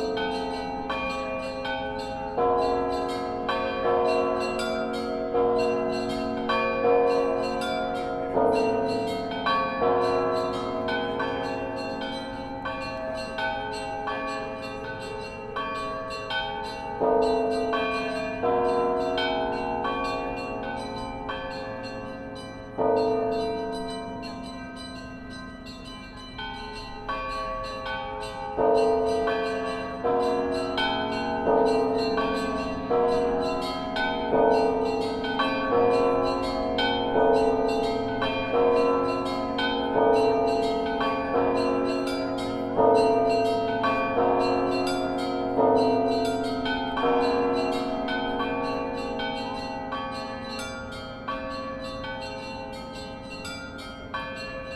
St.Volodymyrs Cathedral, Tarasa Shevchenko Blvd, Kyiv, Ukraine - Easter Sunday Bells
zoom recording of bells as Orthodox families line for blessing outside St.Volodomyr's on Easter Sunday
8 April 2018